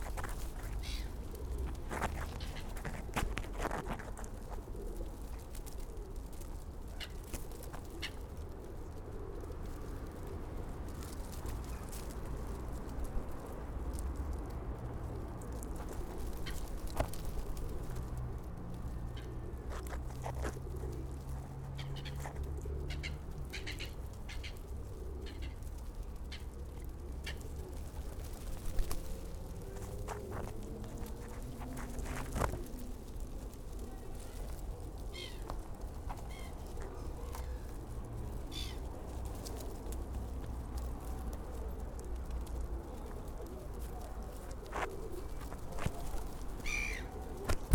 Tallinn, Baltijaam pigeons feeding - Tallinn, Baltijaam pigeons feeding (recorded w/ kessu karu)
hidden sounds, pigeon footfalls and cooing while feeding on potato pirukas at Tallinn's main train station.
Tallinn, Estonia